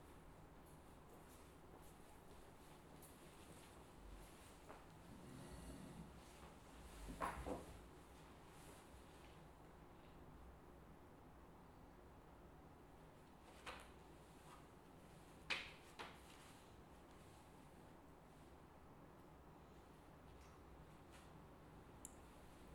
Nossa Senhora do Pópulo, Portugal - Biblioteca ESAD.CR
Recorded with TASCAM DR40